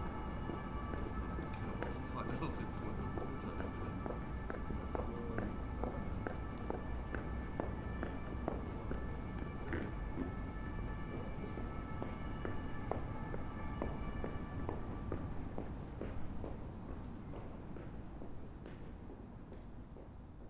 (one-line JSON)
{"title": "Berlin: Vermessungspunkt Friedelstraße / Maybachufer - Klangvermessung Kreuzkölln ::: 20.03.2011 ::: 04:28", "date": "2011-03-20 04:28:00", "latitude": "52.49", "longitude": "13.43", "altitude": "39", "timezone": "Europe/Berlin"}